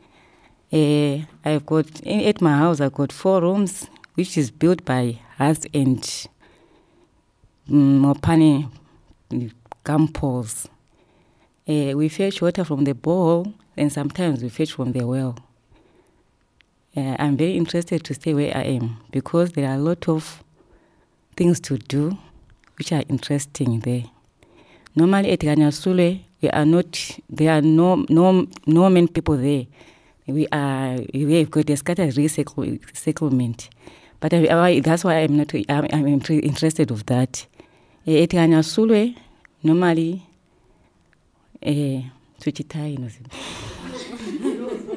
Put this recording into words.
….you are joining us during a workshop for audio documentation with the women of Zubo Trust in a round hut-shaped conference room at Tusimpe… quite at the beginning we explored the power of detailed description; how can we take our listeners with us to a place they might not know… here Lucia Munenge, Zubo’s community-based facilitator for Sikalenge gives it a try in her first recording...